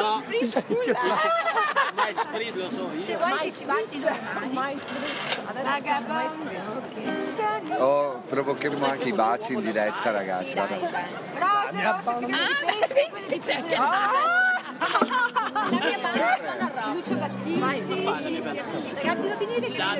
diversa-mente
giornata in piazza DIVERSAMENTE 10 OTTOBRE